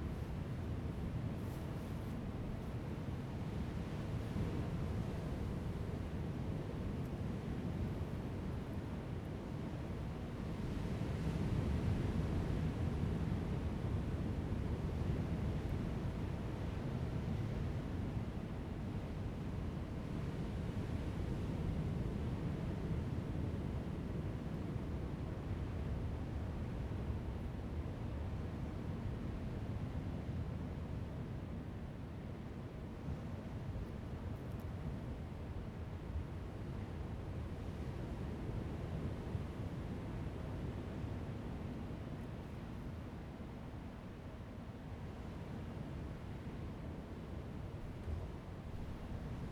Jizazalay, Ponso no Tao - Hiding in the rock cave
Hiding in the rock cave, sound of the waves
Zoom H2n MS +XY
Lanyu Township, Taitung County, Taiwan, 29 October 2014, 10:40